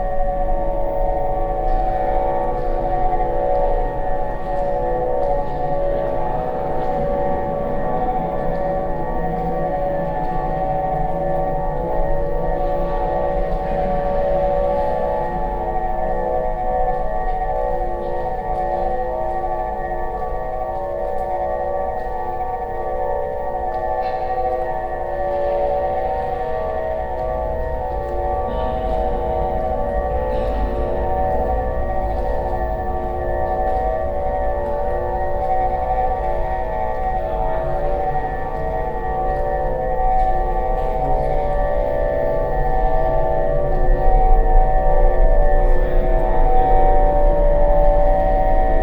Altstadt-Süd, Köln, Deutschland - Cologne, Deutzer Brücke, inside the bridge
Inside the first hall of the bridge. The sound of a mechanic installation by the artist group "Therapeutische Hörgruppe Köln" during the Brueckenmusik 2013.
soundmap nrw - social ambiences, art spaces and topographic field recordings/